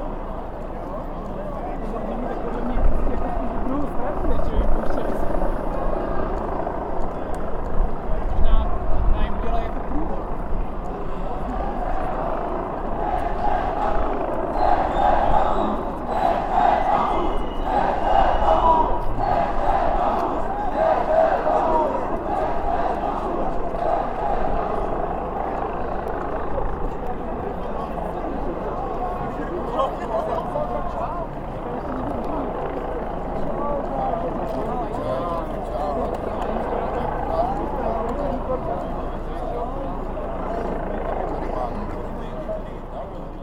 {"title": "Brno - counter demonstration", "date": "2011-05-01 15:31:00", "description": "Counter demonstration blokad for keep away the so-called neonazi demonstration in a street of gipsy people.", "latitude": "49.20", "longitude": "16.62", "altitude": "206", "timezone": "Europe/Prague"}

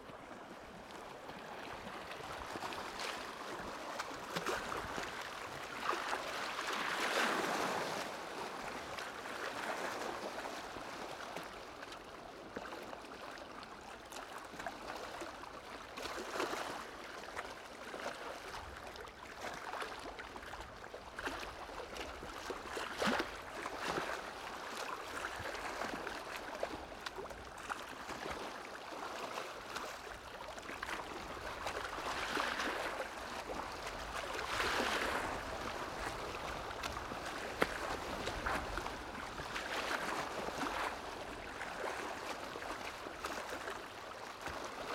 {"title": "Hungary, Balaton Lake, Siofok, Waves", "date": "2010-11-28 14:41:00", "latitude": "46.91", "longitude": "18.05", "altitude": "100", "timezone": "Europe/Budapest"}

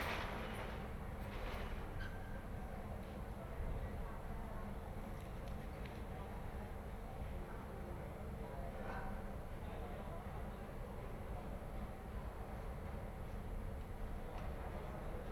{"title": "Ascolto il tuo cuore, città, I listen to your heart, city. Several chapters **SCROLL DOWN FOR ALL RECORDINGS** - Noon’s bells with dog at Easter in the time of COVID19 Soundscape", "date": "2020-04-12 11:30:00", "description": "\"Noon’s bells with dog at Easter in the time of COVID19\" Soundscape\nChapter LXII of Ascolto il tuo cuore, città. I listen to your heart, city\nSunday April 12th 2020. Fixed position on an internal terrace at San Salvario district Turin, thirty three days after emergency disposition due to the epidemic of COVID19.\nStart at 11:30 a.m. end at 00:35 p.m. duration of recording 1h:05’:00”", "latitude": "45.06", "longitude": "7.69", "altitude": "245", "timezone": "Europe/Rome"}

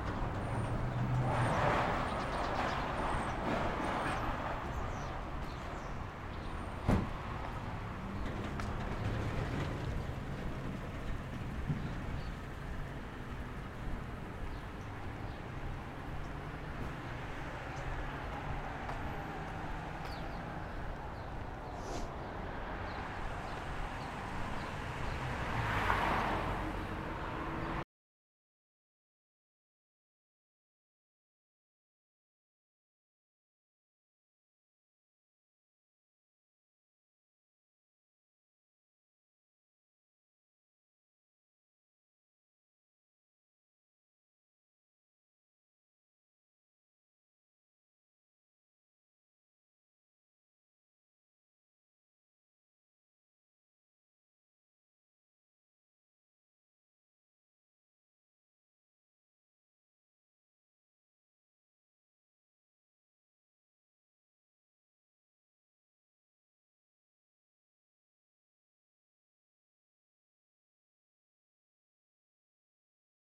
{"title": "Broadway, Newburgh, NY, USA - Paulitas Grocery", "date": "2021-01-29 10:21:00", "description": "Buying green peppers and red jalapenos at the grocery store. Zoom F1 w/ XYH-6 stereo mic", "latitude": "41.50", "longitude": "-74.02", "altitude": "60", "timezone": "America/New_York"}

